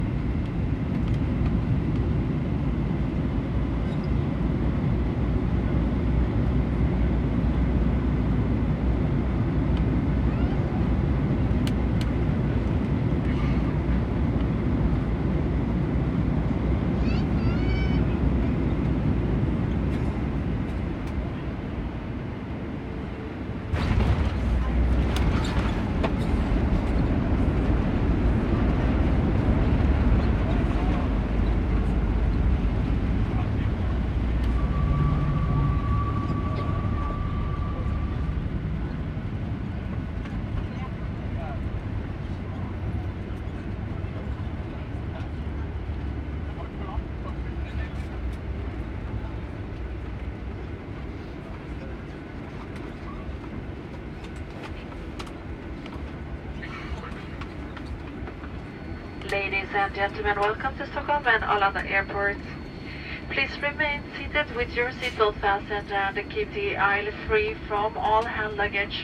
Arlanda Airport Runway - Norwegian Airlines arrival to Arlanda
A Norwegian Airlines arriving to Arlanda airport and getting towards it's gate.
Stockholms län, Sverige, 26 March